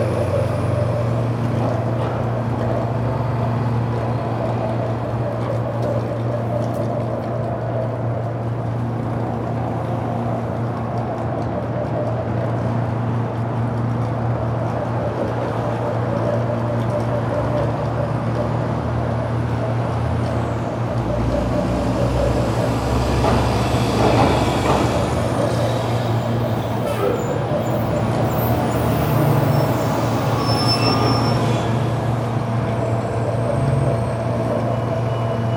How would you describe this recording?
Pump, gurgling, trains, underground, sidewalk level, Pittsfield Building, Chicago